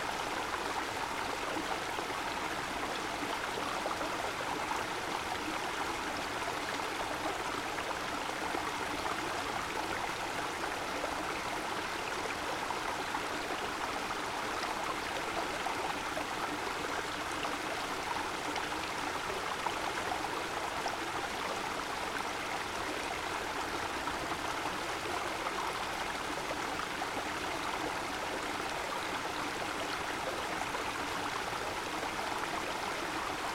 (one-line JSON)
{"title": "C. Segunda, Real Sitio de San Ildefonso, Segovia, España - Cascada del Arroyo de la Chorranca", "date": "2021-08-18 19:38:00", "description": "Sonidos del Arroyo de la Chorranca en Valsaín. El arroyo pasa por una zona rocosa en donde con el paso del tiempo, el agua ha ido esculpiendo las rocas formando unas pequeñas pocitas y cascadas a su bajada, se llega adentrándose un poco fuera de la senda entre los pinares de Valsaín. Se sitúa muy cerca de una ruta llamada Sendero de los Reales Sitios creada en el siglo XVIII por el rey Carlos III. Esta ruta llega hasta el Palacio de la Granja de San Idelfonso. Toda la zona es muy natural y preciosa. Al caer el sol... grababa lo que escuchaban mis oídos...", "latitude": "40.89", "longitude": "-4.01", "altitude": "1193", "timezone": "Europe/Madrid"}